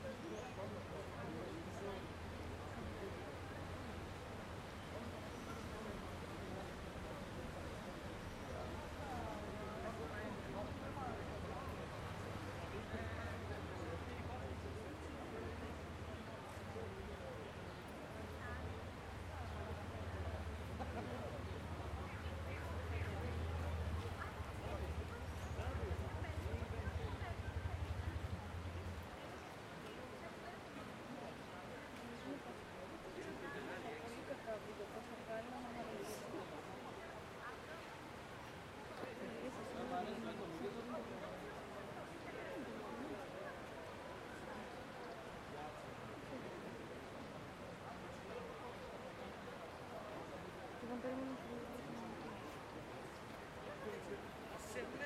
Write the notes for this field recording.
River flow, people discussing in groups, people passing by.